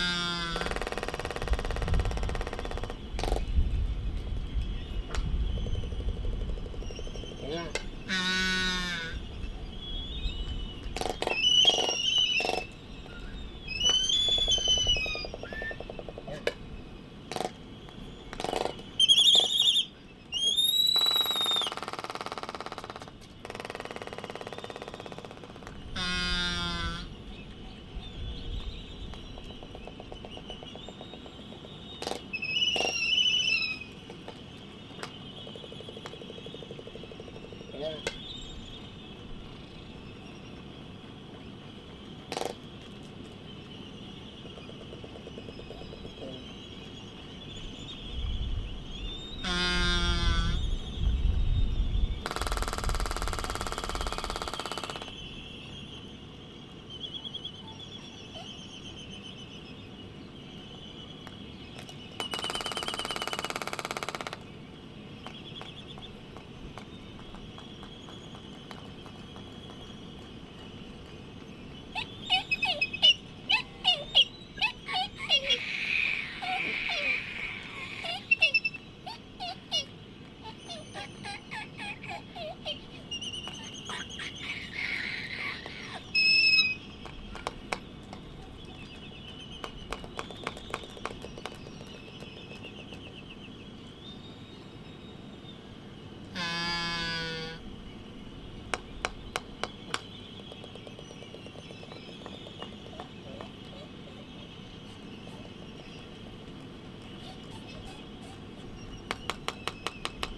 United States Minor Outlying Islands - Laysan albatross dancing ...
Sand Island ... Midway Atoll ... laysan albatross dancing ... Sony ECM 959 one point stereo mic to Sony Minidisk ... background noise ...